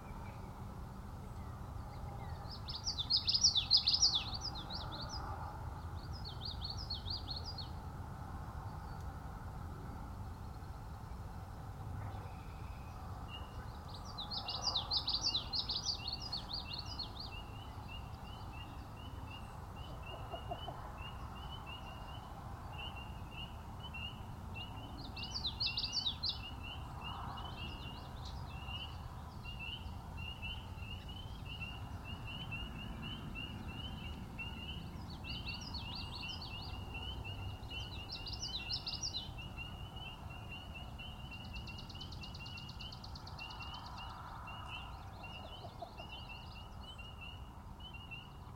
Lower Alloways Creek, NJ, USA - salem river
Dusk recording along closed section of road by a noisy, condemned bridge. Reedy, tidal wetlands.The bridge pops while birds and frogs sing.
21 April 2017